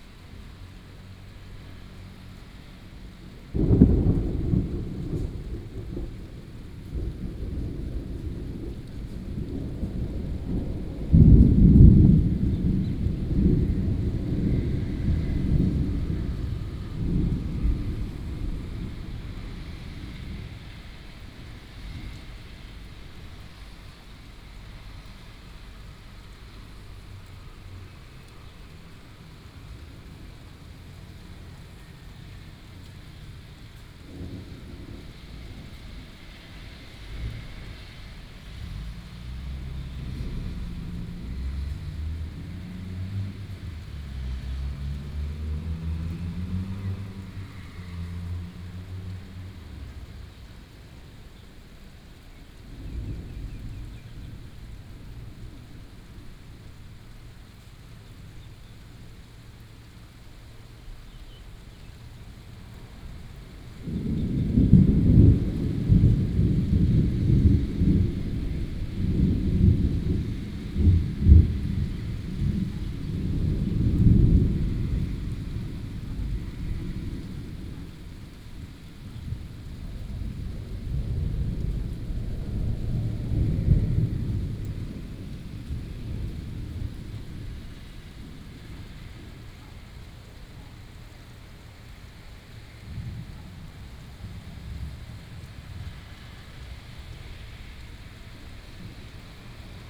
This month is almost thunderstorms every afternoon, birds sound, Thunderstorms, Traffic sound